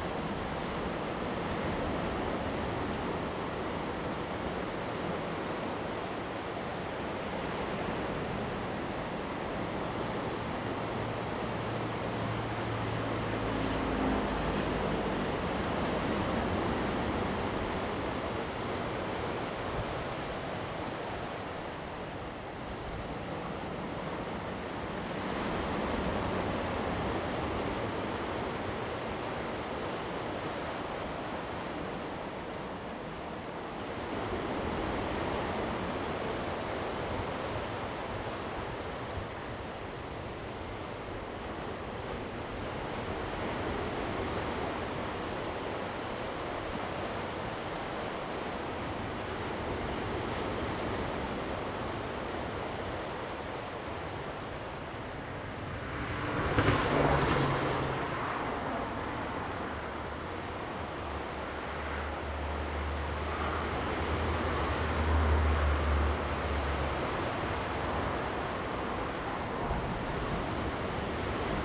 齒草橋休憩區, Taitung County - the waves and Traffic Sound
Sound of the waves, Traffic Sound
Zoom H2n MS+XY